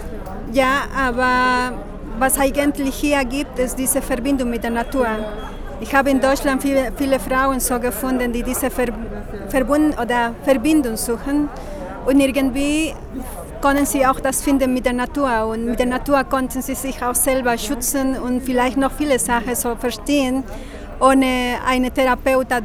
outside the VHS, Platz der Deutschen Einheit, Hamm, Germany - Amanda Luna zum Klimakampf indigener Frauen in Peru
“Violence against women” locally and in other countries, this was the alarm raising topic of the evening. A fire alarm went off and cut the already pandemic-style brief event further but, luckily, the mic was at hand for a spontaneous live interview with the last presenter in front of the building, while the fire brigade marched in…
15 September 2020, 20:44